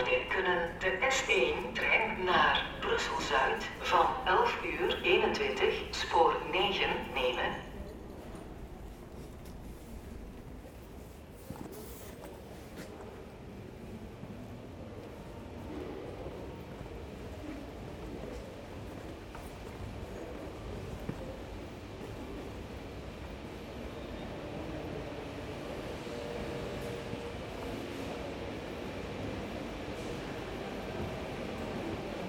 Mechelen, Belgique - Mechelen station
The Mechelen station. In first, a walk in the tunnel, with announcements about a train blocked in Vilvoorde. After on the platforms, a train leaving to Binche, a lot of boy scouts shouting ! At the end, a train leaving to Antwerpen, and suddenly, a big quiet silence on the platforms.